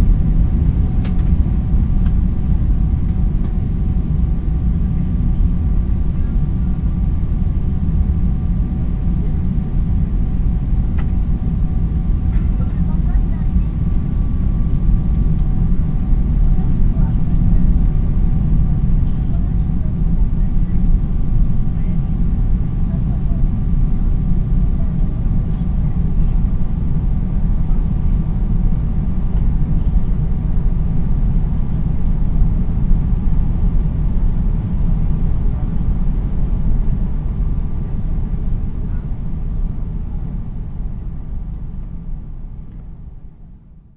in the airplane - approaching tokio airport
inside an airplane landing, approaching tokio airport - an announcement
international sound scapes and social ambiences
23 July 2010, 13:00